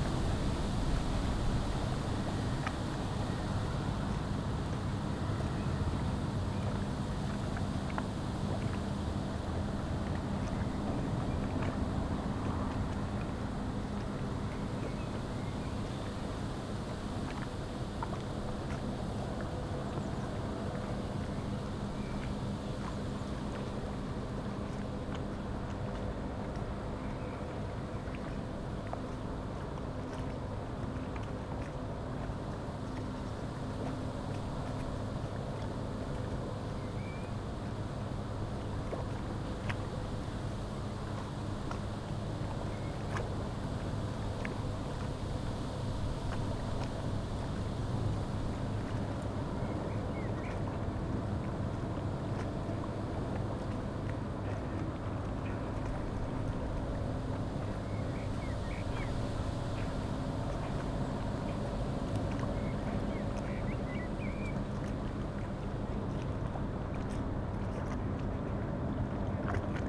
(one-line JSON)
{"title": "Berlin, Plänterwald, Spree - river Spree ambience", "date": "2020-03-21 17:00:00", "description": "place revisited at spring break, a rather cold and windy day.\n(SD702, Audio Technica BP4025)", "latitude": "52.49", "longitude": "13.49", "altitude": "23", "timezone": "Europe/Berlin"}